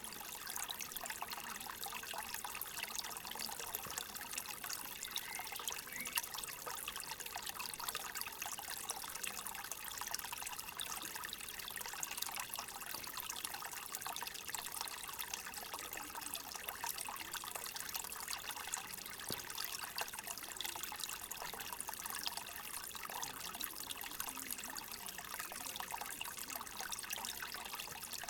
Нагірна вул., Вінниця, Вінницька область, Україна - Alley12,7sound14stream
Ukraine / Vinnytsia / project Alley 12,7 / sound #14 / stream